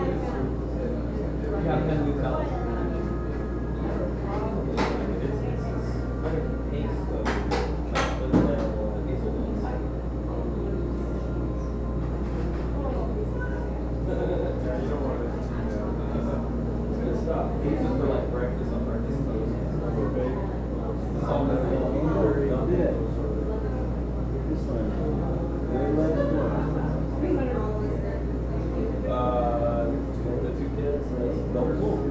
{"title": "neoscenes: Sparkys for ice cream", "date": "2010-03-07 19:17:00", "latitude": "38.95", "longitude": "-92.33", "altitude": "226", "timezone": "America/Chicago"}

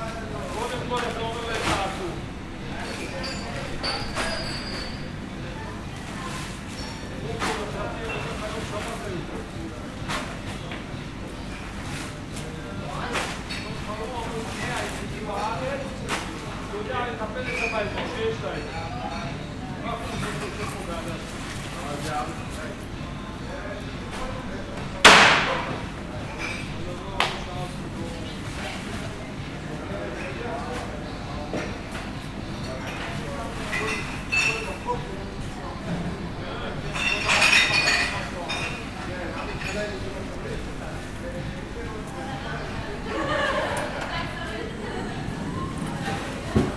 {"title": "Mahane Yehuda St, Jerusalem, Israel - Iraqi Market at Machane Yehuda, Jerusalem", "date": "2019-03-31 22:40:00", "description": "Iraqi Market at Machane Yehuda, Jerusalem, closing market time, night time.", "latitude": "31.79", "longitude": "35.21", "altitude": "816", "timezone": "Asia/Jerusalem"}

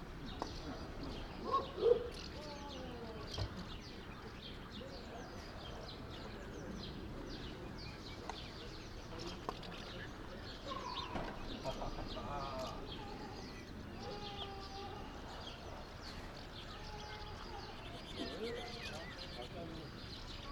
{"title": "Groß Neuendorf, Oder - on the harbour bridge", "date": "2010-05-23 12:20:00", "description": "on the iron brigde at the harbour tower, ambient. (pcm d50)", "latitude": "52.70", "longitude": "14.41", "altitude": "9", "timezone": "Europe/Berlin"}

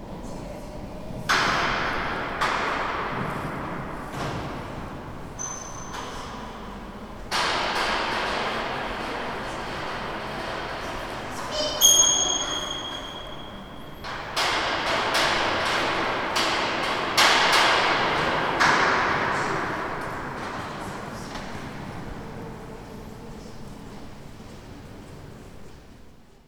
{"title": "rosario: cathedral, noto - cathedral, noto", "date": "2010-12-30 15:49:00", "latitude": "36.89", "longitude": "15.07", "altitude": "136", "timezone": "Europe/Rome"}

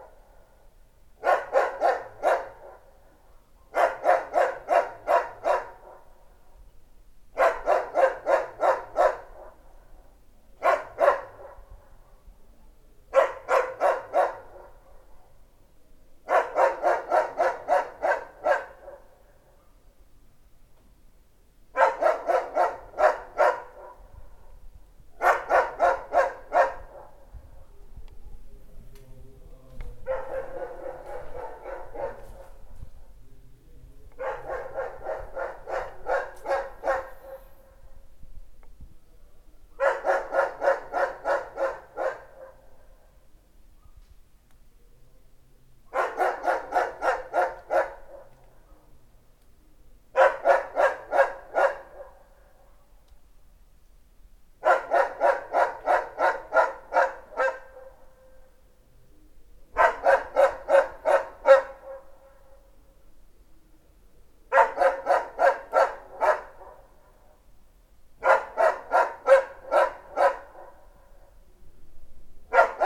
{"title": "Chemin Des Filaos, Réunion - 2016-08-01-2h02", "date": "2016-08-01 02:02:00", "description": "2016-08-01-2h02 du matin: un chien hurle, en continu, durant des heures, chaque nuit, c'est une chienne berger allemand.\nC'est marrant non? à forte dose c'est un des problèmes sanitaires majeurs de la Réunion (en plus des hélicos le matin)", "latitude": "-21.14", "longitude": "55.47", "altitude": "1186", "timezone": "Indian/Reunion"}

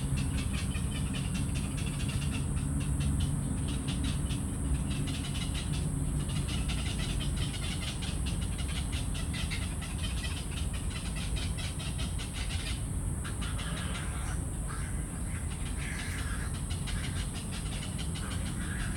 {"title": "大安森林公園, 大安區 Taipei City - Bird sounds", "date": "2016-08-17 20:03:00", "description": "Next to the ecological pool, Bird sounds, Voice traffic environment\nZoom H2n MS+XY+Sptial audio", "latitude": "25.03", "longitude": "121.53", "altitude": "8", "timezone": "Asia/Taipei"}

